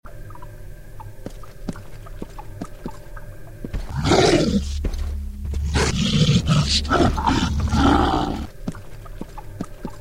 Nürnberg, acoustic room of fear
"Grunzulator".
Soundmachine, producing an acoustic room of fear by using soundsamples from PS 3 Egoshooters.